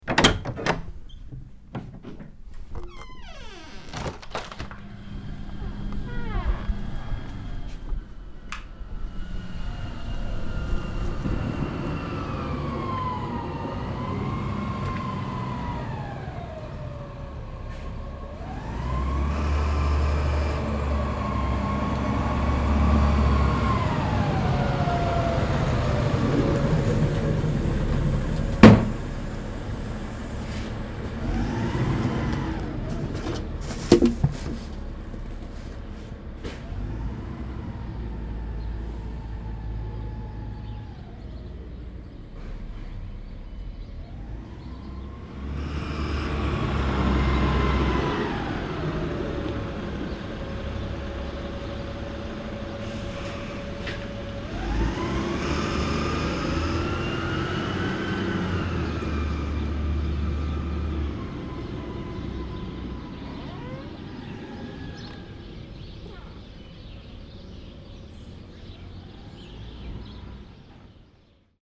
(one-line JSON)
{"title": "Neuflize - Eboueurs", "date": "2017-07-05 18:14:00", "description": "Le lundi matin vers 6h30, c'est le ramassage des ordures.", "latitude": "49.41", "longitude": "4.30", "altitude": "82", "timezone": "Europe/Paris"}